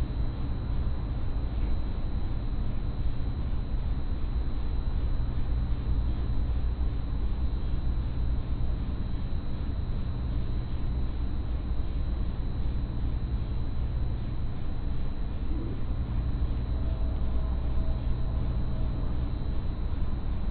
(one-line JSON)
{"title": "묵호 등대 밑에 under the Mukho lighthouse", "date": "2021-10-24 22:00:00", "description": "묵호 등대 밑에_under the Mukho lighthouse...idyll sinister...", "latitude": "37.56", "longitude": "129.12", "altitude": "65", "timezone": "Asia/Seoul"}